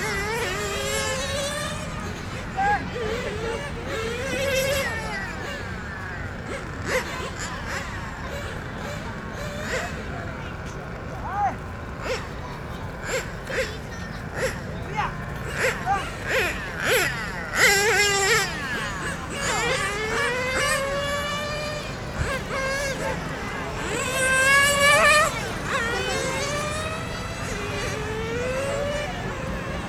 Erchong Floodway, New Taipei City - Remote control car
Remote control car, Zoom H4n+Rode NT4
12 February 2012, ~17:00